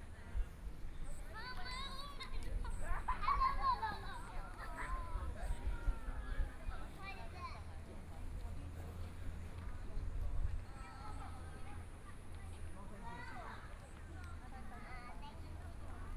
in the Visitor Center, Tourists